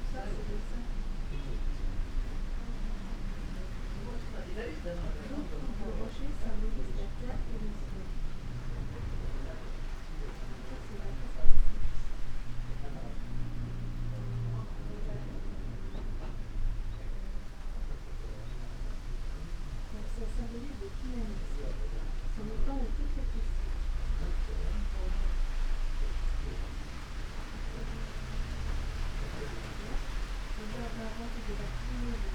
dry landscape garden, Daisen-in, Kyoto - waterfall, gravel river stream
curves of ancient trees
writing stories upon the sky
writing their silences, with bouncing wooden-floor noise
gardens sonority